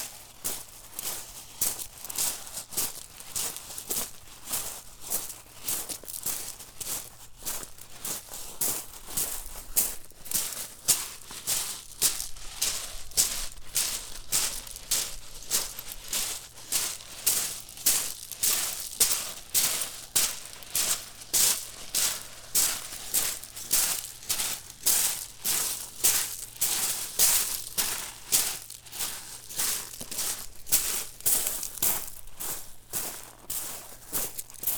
Cayeux-sur-Mer, France - Walking on the pebbles
Walking on the pebbles on a solitary shingle beach.
1 November 2017